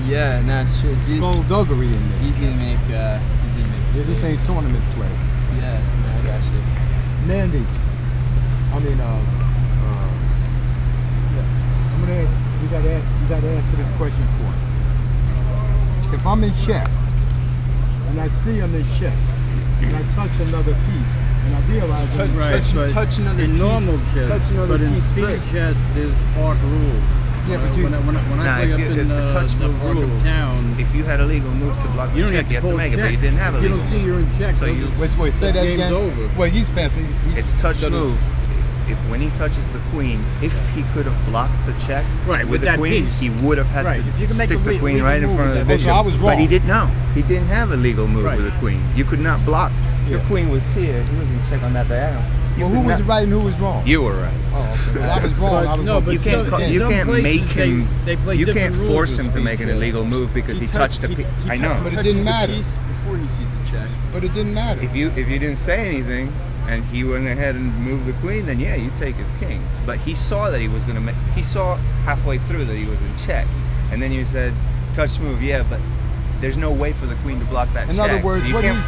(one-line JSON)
{"title": "Washington Square, New York - Chess players in Washington Square, New York", "date": "2010-09-09 11:12:00", "description": "Chess players in Washington Square, New York. Joueurs d'échec à Washington Square.", "latitude": "40.73", "longitude": "-74.00", "altitude": "6", "timezone": "America/New_York"}